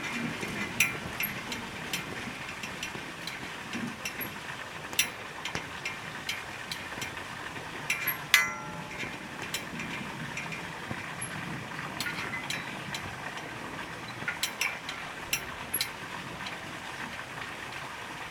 the flag over paradise, Rovinjsko Selo
flag and fountain sounds
Rovinj, Croatia